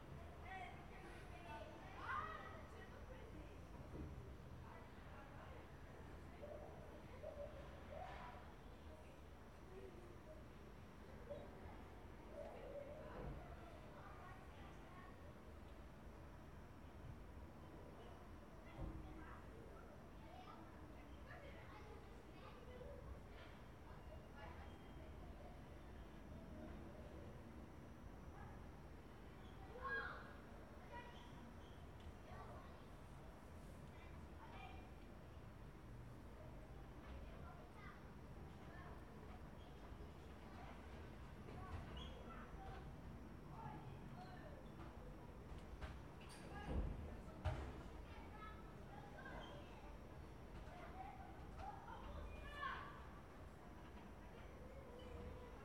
R. Ipanema - Mooca, São Paulo - SP, Brasil - EXTERNA- CAPTAÇÃO APS UAM 2019
Captação de áudio interna para cena. Trabalho APS - Disciplina Captação e edição de áudio 2019/1